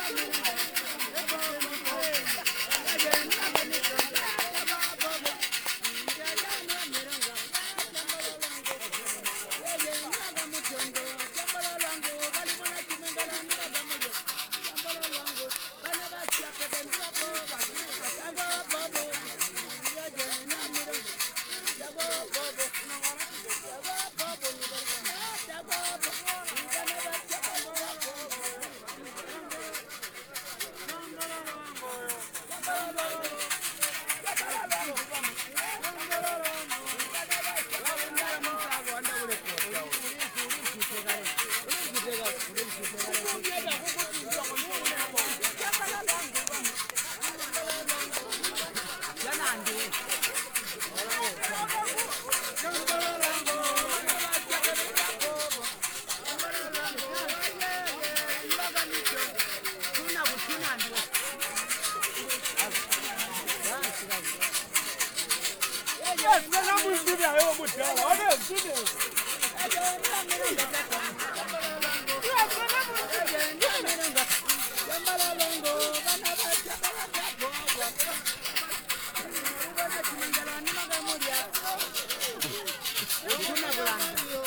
also Muka-Moonga is a regular at the pitch... you'll hear the sounds of the rattles from afar... when i pass she engages me in a dance... to the amusement of all around...
(muka-moonga is well known in the community, i enjoyed her dropping in at a number of our live broadcasts at Zongwe FM studio; she holds a lot of knowledge about Tonga culture, about the uses of local plants etc.)
Southern Province, Zambia, July 2018